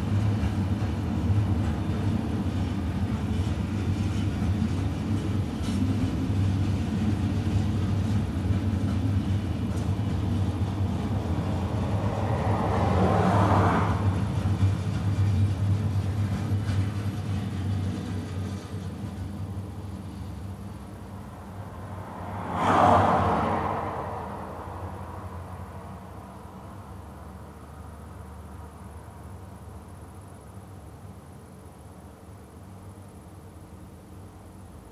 Pl. de la Gare, Saint-Avre, France - Cars and trains
Minidisc recording from 1999.
Tech Note : Sony ECM-MS907 -> Minidisc recording.
France métropolitaine, France, 18 July 1999, ~10:00